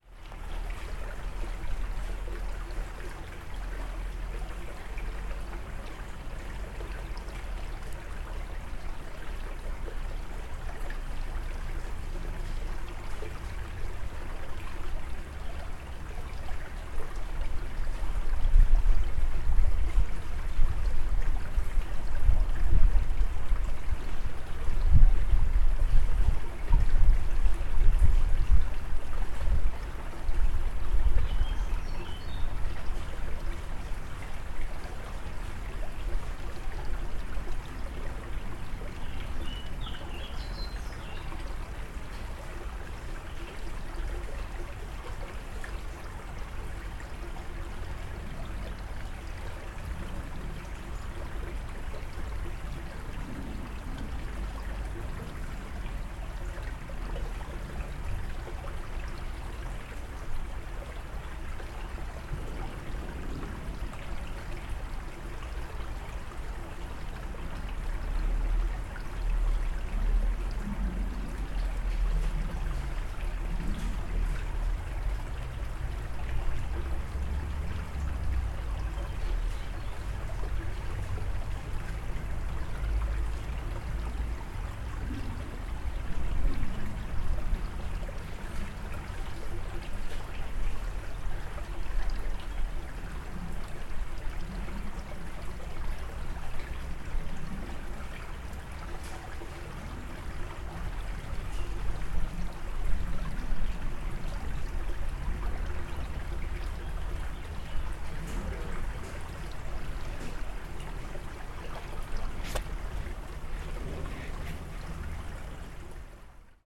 Making a little pause drinking a coffee on the patio.
Wuppertal, Germany, 1 August 2013